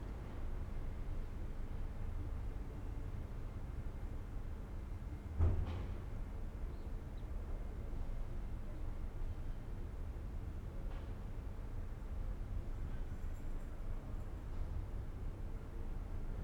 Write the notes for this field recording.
"Saturday afternoon without laughing students but with howling dog in the time of COVID19" Soundscape, Chapter XCIX of Ascolto il tuo cuore, città. I listen to your heart, city, Saturday, June 6th 2020. Fixed position on an internal terrace at San Salvario district Turin, eighty-eight days after (but day thirty-four of Phase II and day twenty-one of Phase IIB and day fifteen of Phase IIC) of emergency disposition due to the epidemic of COVID19. Start at 4:43 p.m. end at 5:26 p.m. duration of recording 43’22”